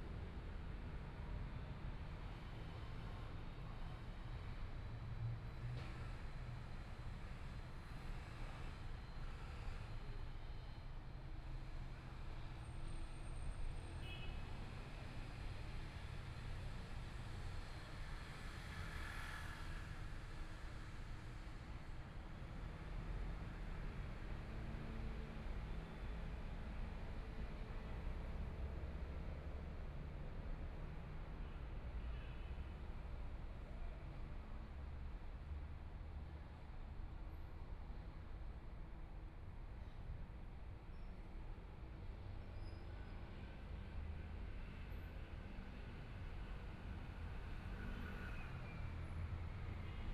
JinZhou Park, Taipei City - Sitting in the park
Sitting in the park, In children's play area, Environmental sounds, Motorcycle sound, Traffic Sound, Binaural recordings, Zoom H4n+ Soundman OKM II